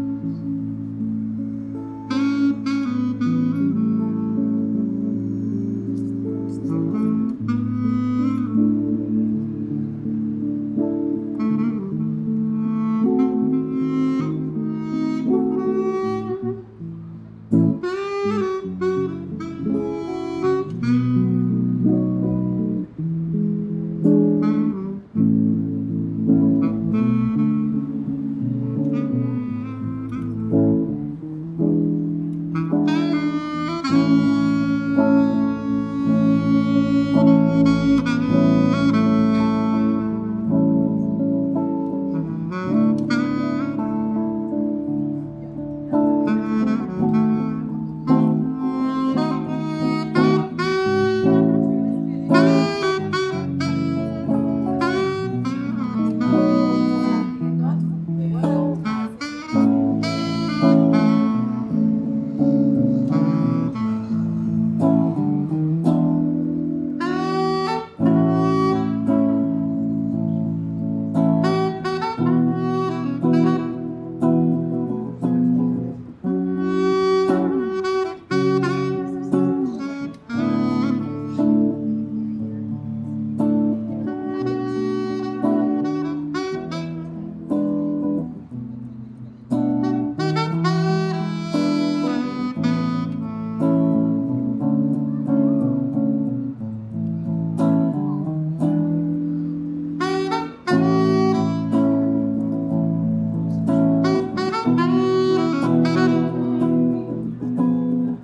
sur le site de l'arc de triomphe il fait beau, les touristes flânent, deux musiciens nous régalent de leur musique harmonieuse
on the site of the Arc de Triomphe, the weather is nice, tourists stroll, two musicians we feast of their harmonious music
15 March 2019, ~11:00